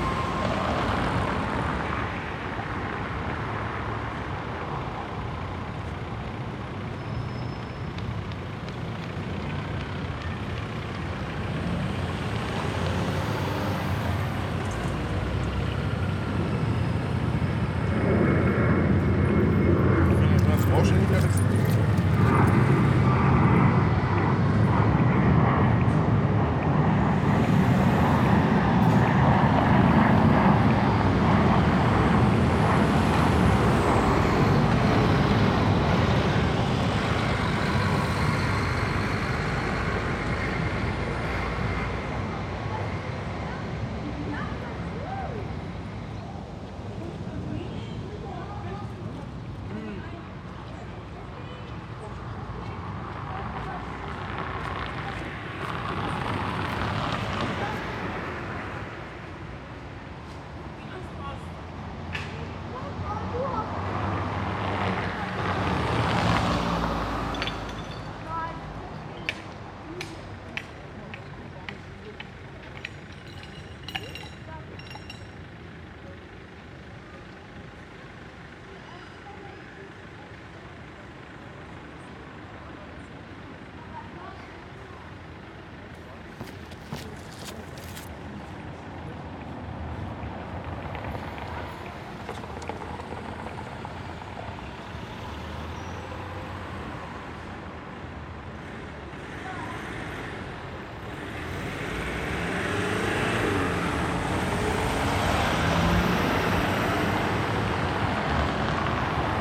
Soldiner Straße/Koloniestraße, Berlin, Deutschland - Soldiner Straße/Koloniestraße, Berlin - traffic, passers-by, passengers waiting for the bus
Soldiner Straße/Koloniestraße, Berlin - traffic, passers-by. Soldiner Straße and Koloniestraße are both streets with moderate traffic. After a few minutes, several workers gather around the two bus stops. They continue their chatting and laughing from one side of the street to the other until they finally catch their bus. Thanks to the near Tegel airport there is no place in Soldiner Kiez without aircraft noise.
[I used the Hi-MD-recorder Sony MZ-NH900 with external microphone Beyerdynamic MCE 82]
Soldiner Straße/Koloniestraße, Berlin - Verkehr, Passanten. Sowohl die Soldiner Straße als auch die Koloniestraße sind mäßig befahren. Nach einer Weile sammeln sich mehr und mehr Arbeiterinnen an den beiden Bushaltestellen. Bis sie einsteigen und abfahren, führen sie ihr Gespräch auch über die Straße hinweg fort. Durch den nahen Flughafen Tegel gibt es keinen Ort im Soldiner Kiez, an dem nicht in regelmäßigen Abständen Fluglärm zu hören wäre.